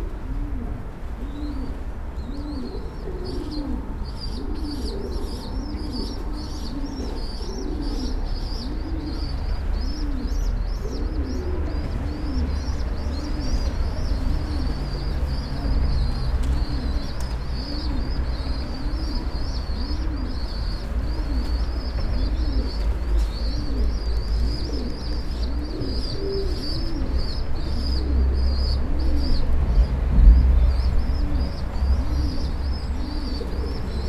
Daniela Keszycki bridge, Srem - under the bridge
recording under the bridge between massive concrete pillars. plenty of piegons living there. you can hear their chirps and wing flaps bouncing of the sides of the pillars. at one point one of the birds drops a big piece of bread into the river. it's a busy part of town so there are a lot of sounds of traffic on the bridge. a group of teenagers walking on the bridge listening to hiphop on a portable speaker. The way the music reverberated under the bridge is vey interesting. (Roland R-07 internal mics)